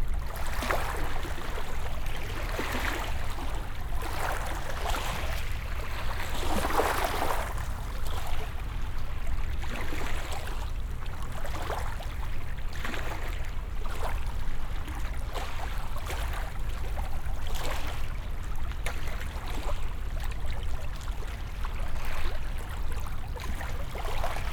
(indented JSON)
{
  "title": "Unnamed Road, Croton-On-Hudson, NY, USA - Beachplay-Croton Point",
  "date": "2019-11-26 08:34:00",
  "description": "You are listening to Hudson's playful waves in a cove on Croton Point on a sunny November morning. An intense machine world, despite the great distance, is always palpable.",
  "latitude": "41.17",
  "longitude": "-73.90",
  "altitude": "4",
  "timezone": "America/New_York"
}